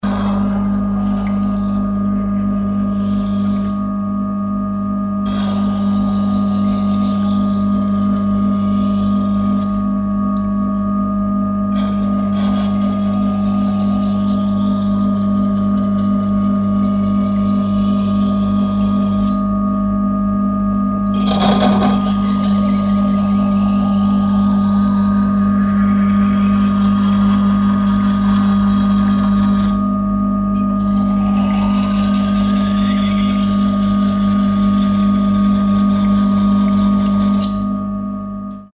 monheim, klappertorstr, fischräucherei - monheim, klappertorstr, fischräucherei, messer
schärfen eines messers am motorisiertem schleifstein
soundmap nrw - social ambiences - sound in public spaces - in & outdoor nearfield recordings
klappertorstr, fischräucherei weber